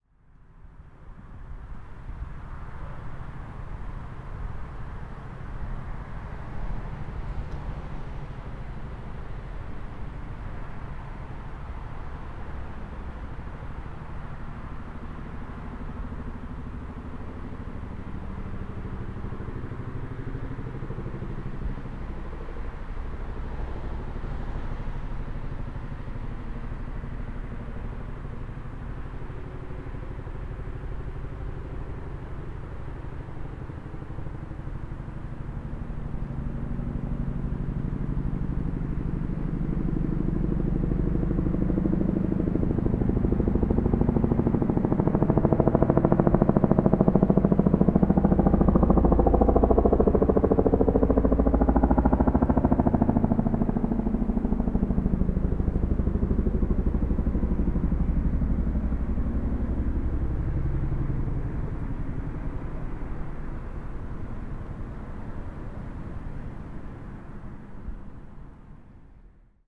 Alfold Bypass, Cranleigh, UK - chinook
slightly noisy and too many cars but a distant Chinook helicopter circling the aerodrome always sounds menacing.
tascam dr40x with matched pair of rode nt5's